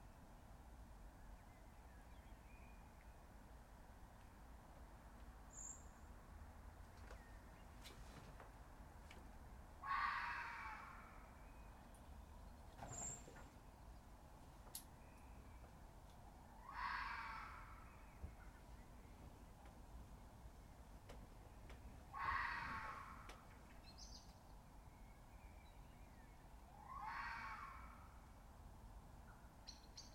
Harp Meadow Ln, Colchester, UK - Fox Screaming, 1am.
A fox recorded with USI Pro around 1am recorded onto a mixpre6.